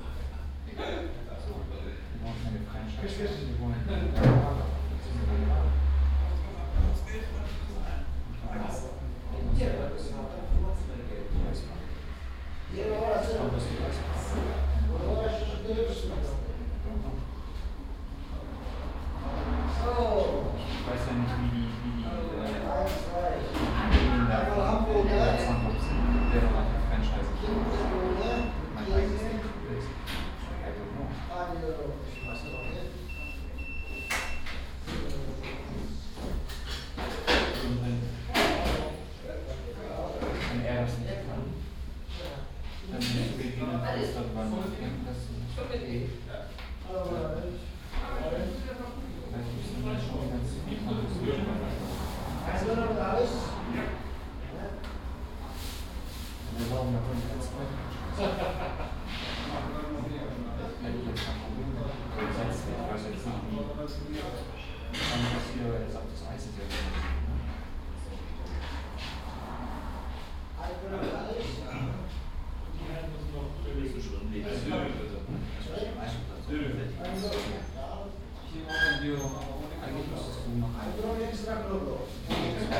Kronshagen, Eckernfoerder Strasse, Snack-bar - Snack-bar, Saturday evening
Snack-bar on a Saturday evening, people coming and going, ordering, cooking, paying, people talking, some traffic from outside.
Binaural recording, Soundman OKM II Klassik microphone with A3-XLR adapter, Zoom F4 recorder.